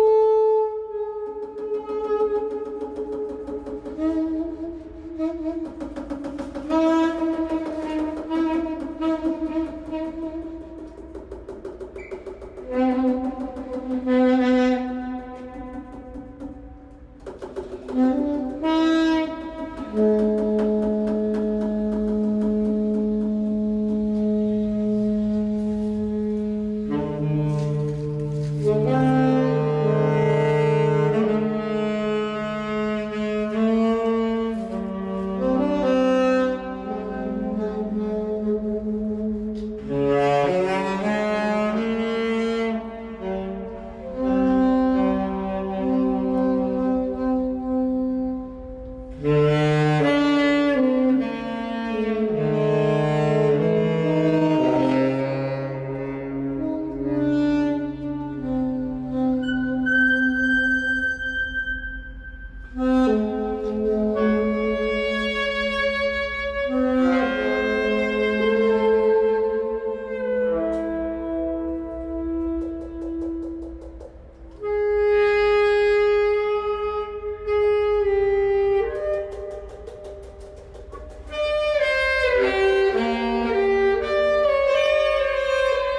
Vor dem Tunnel kommen Altsax und Tenorsax zum Spiel